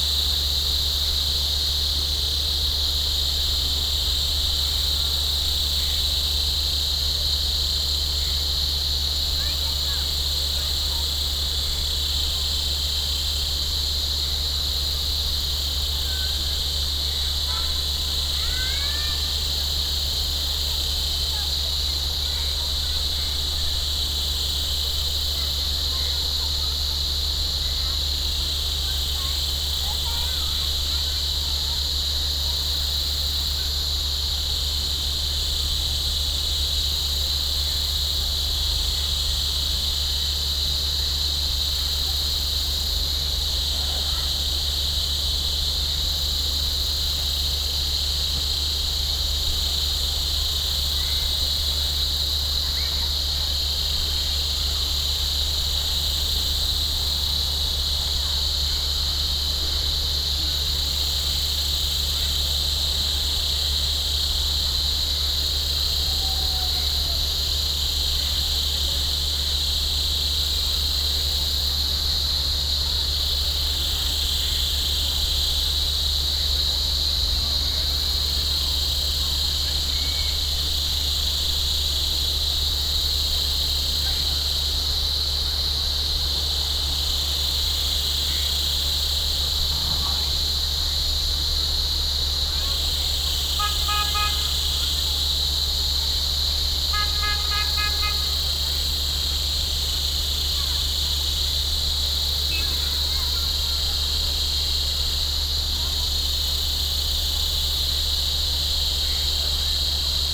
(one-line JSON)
{"title": "Ludrong Zur Lam 11 NE, Thimphu, Bhutan - Tree Ears Recording of Cicadas", "date": "2022-10-02 13:21:00", "description": "Tree Ears Recording of Cicadas - 2. October 2022 - Recorded with Sonorous Objects SO.1 mics and Centrance Mixerface to iphone.", "latitude": "27.49", "longitude": "89.64", "altitude": "2314", "timezone": "Asia/Thimphu"}